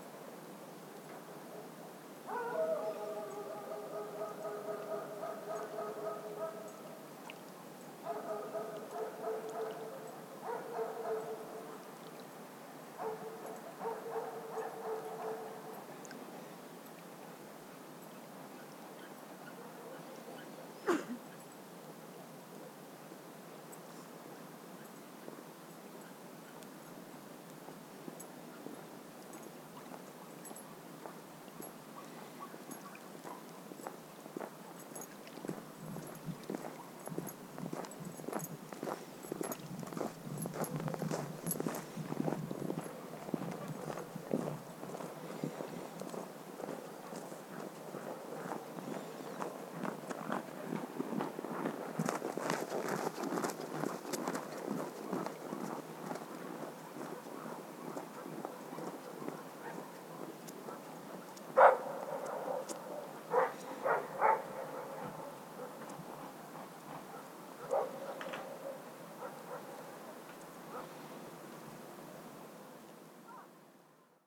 Lithuania, Utena, town park at winter
some dogs in the distance, and lonely passenger...